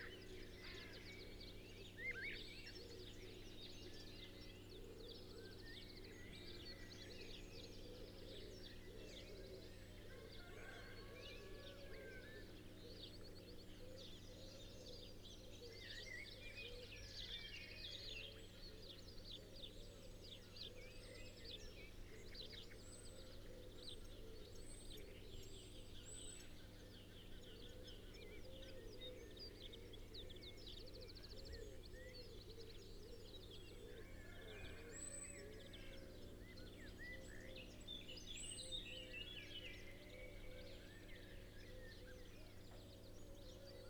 Malton, UK - autogyro ...
autogyro ... dpa 4060s in parabolic to mixpre3 ... bird calls ... song ... from ... wren ... chaffinch ... blackbird ... tree sparrow ... song thrush ... linnet ... blackcap ...
Yorkshire and the Humber, England, United Kingdom